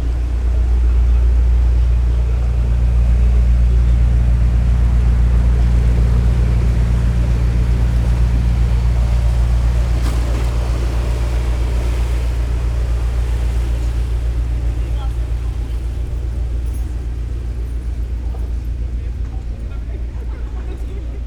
berlin, landwehrkanal, urbanhafen - drone of passing tourist boat
engine of a passing tourist boat creates a deep drone and waves.